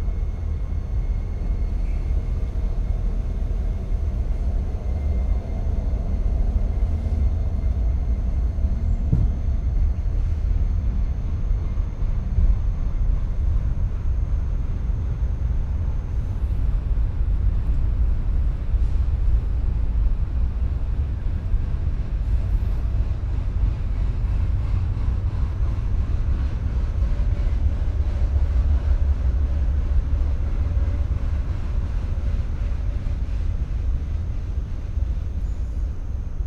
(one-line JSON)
{"title": "backyard ambiance, East Garfield Park - evening, World Listening Day", "date": "2010-07-18 21:41:00", "description": "World Listening Day, WLD, Scoop walking in the weeds next door, barking, freight train passes, wind, whistling, kids screaming, crickets", "latitude": "41.89", "longitude": "-87.71", "altitude": "184", "timezone": "America/Chicago"}